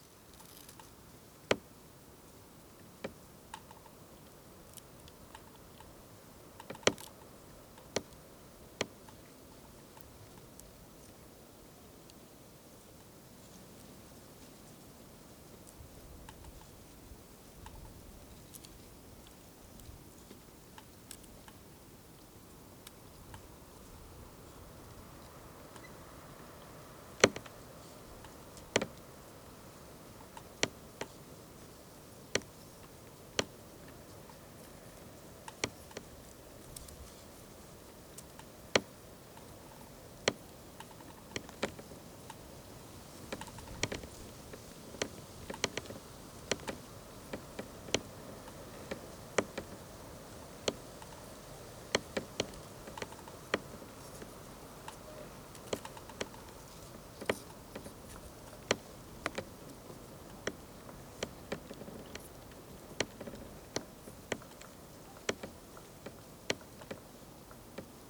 in a march. tree cracking in wintery frost
Lithuania, January 2011